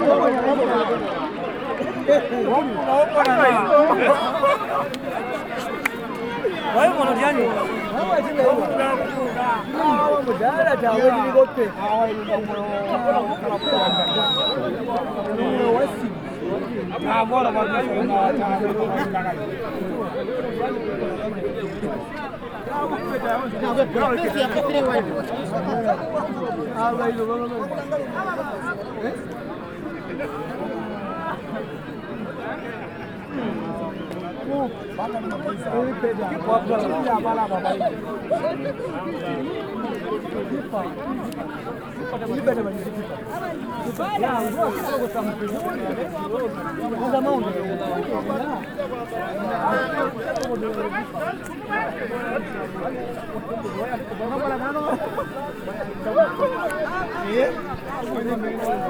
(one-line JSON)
{"title": "Football pitch, Sinazongwe, Zambia - At the Saturday match....", "date": "2018-07-14 16:20:00", "description": "... the match in full swing...", "latitude": "-17.25", "longitude": "27.45", "altitude": "497", "timezone": "Africa/Lusaka"}